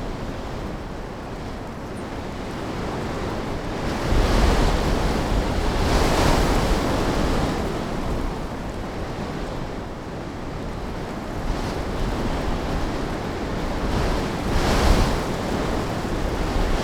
Monteverde, Costa Rica - Wind at Mirador La Ventana, Monteverde cloud forest
Mirador La Ventana is situated on the continental divide, in the Monteverde Cloud Forest Reserve. Wonderfully windy.
24 December 2008